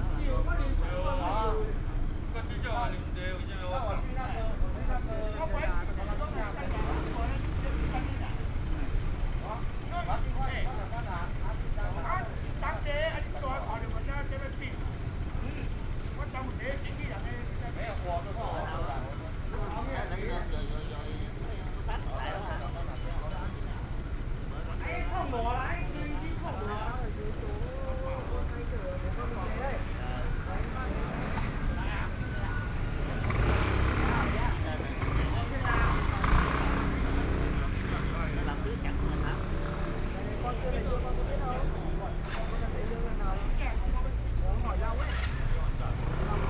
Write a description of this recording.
Traffic Sound, Noon break, in the Park, Workers break